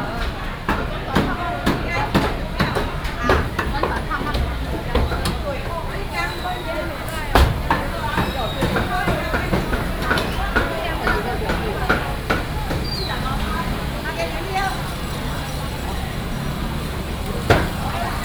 Very noisy traditional market, traffic sound, vendors peddling, Binaural recordings, Sony PCM D100+ Soundman OKM II